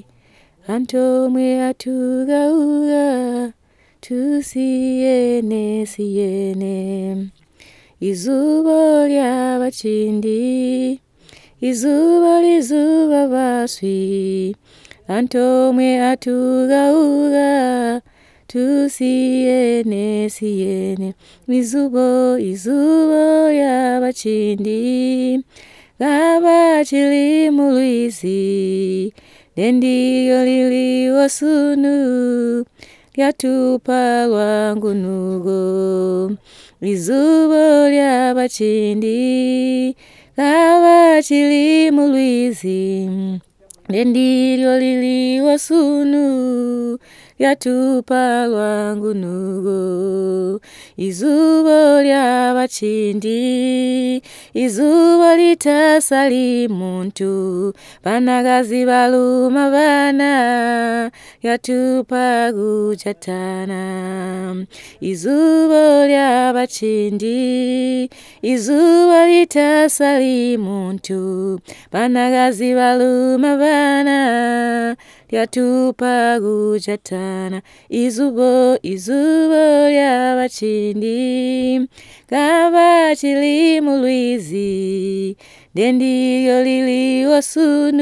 Sikalenge, Binga, Zimbabwe - Zubo is bringing women together...
Lucia Munenge recorded herself singing a song of the Sikalenge women, which tells the story, vision and achievements of “Zubo”, from the traditional fishing-baskets of the BaTonga women to the formation of Zubo Trust as an organisation whose vision is based on the same principle of women working together in teams to support themselves, their families and the community at large.. after the song, Lucia also adds a summary translation in English.
a recording by Lucia Munenge, Zubo's CBF at Sikalenge; from the radio project "Women documenting women stories" with Zubo Trust, a women’s organization in Binga Zimbabwe bringing women together for self-empowerment.
26 July